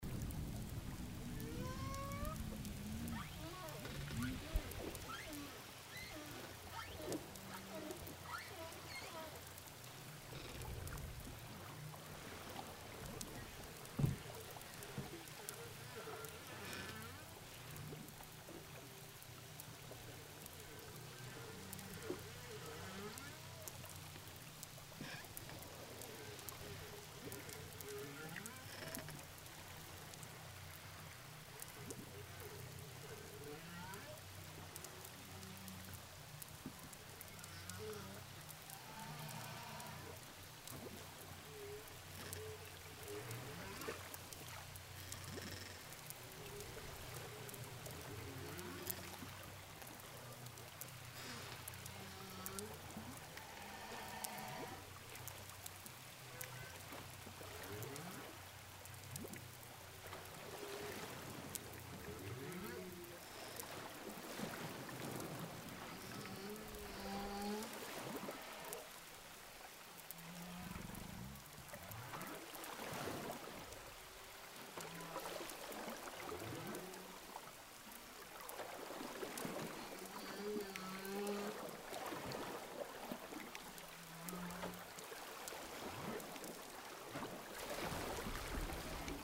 Saint gilles les bains, Whales sound hydrophone
prise de son pour le tournage de signature au large de saint gilles ile de la reunion
26 August 2010, 20:17